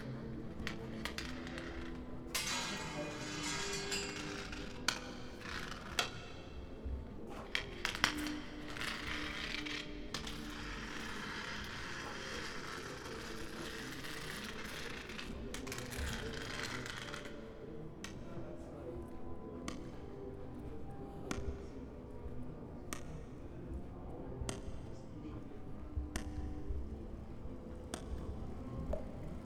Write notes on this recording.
Site specific sound piece and installation for the exhibition Na houby, curated by Martin Klimeš a tribute to John Cage 100 years birthday. About 15 walking stick are left in the exhibition at Divadelni klub and Gottfrei. I recorded my walk with one of the walking sticks in front of the club and around the Cathedral. Finally i walked in the crowd of the vernissage and left the stick there.